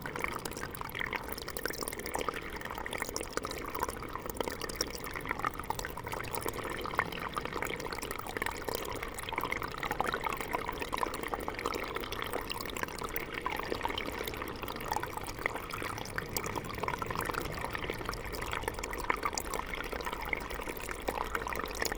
Source-Seine, France - Seine spring
The Seine river is 777,6 km long. This is here the sound of the countless streamlets which nourish the river. Water gushes from holes near every walk path.